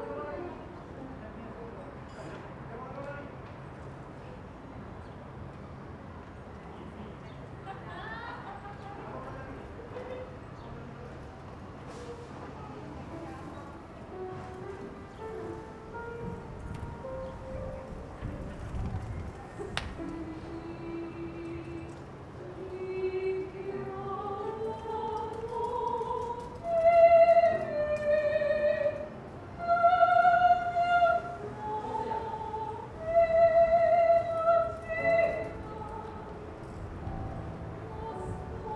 In the street of Valparaiso (Chile), Ive been recording some music rehearsal (singing and piano) coming from inside a house trough the open window.
Región de Valparaíso, Chile, 3 December 2015